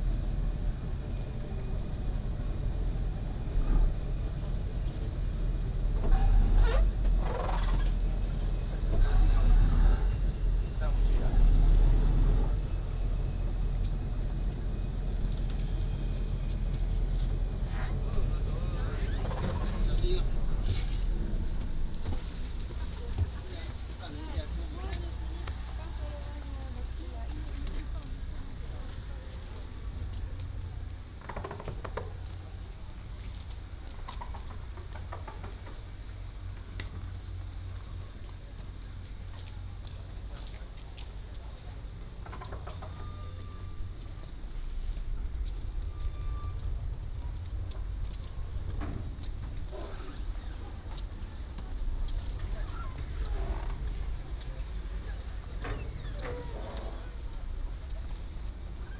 Nangan Township, Taiwan - On a yacht
On a yacht, Soon arrived at the pier